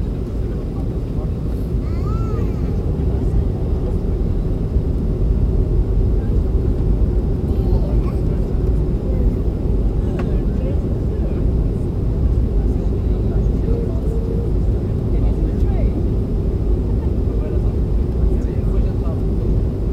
Recorded inside a plane descending on London Stansted Airport.
Stansted, UK, 4 March